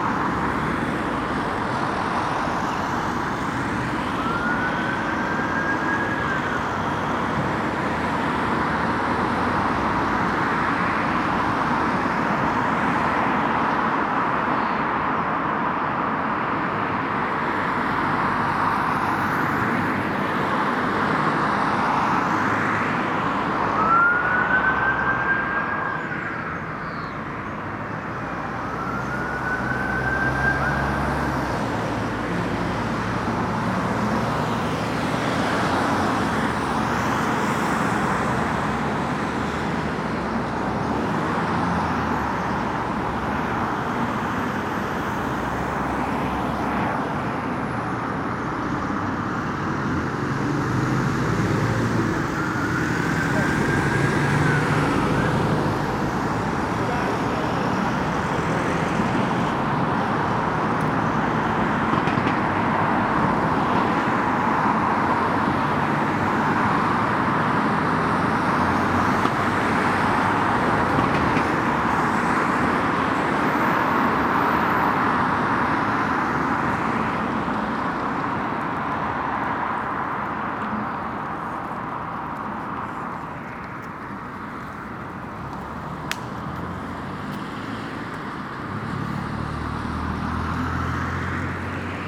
Contención Island Day 48 inner southeast - Walking to the sounds of Contención Island Day 48 Sunday February 21st
Snatches of talk as people run
walk
and wait to cross
Fathers push buggies
of sleeping babies
The runners wait
check their time
hands on knees
Jackdaws explore
a chimney
21 February 2021, ~10:00